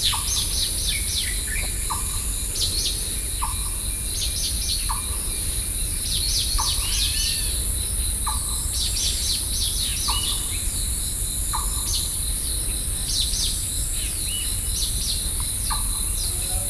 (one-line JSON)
{"title": "Beitou, Taipei - Morning in the park", "date": "2012-06-22 06:37:00", "description": "Morning in the park, Sony PCM D50 + Soundman OKM II", "latitude": "25.14", "longitude": "121.48", "altitude": "32", "timezone": "Asia/Taipei"}